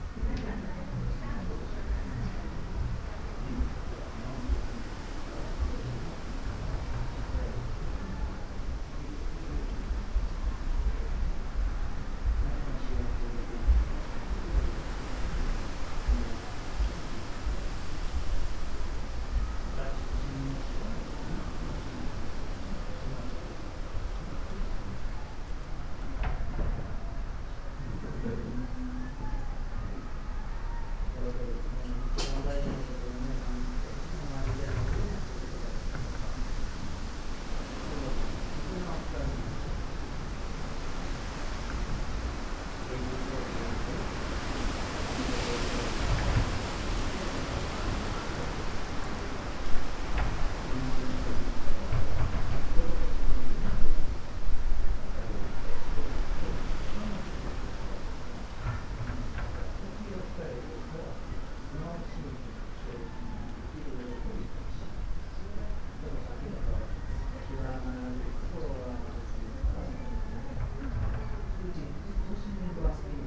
dry garden, Daisen-in, Kyoto - facing ocean
... a single plum flower
blossoming beyond time
gardens sonority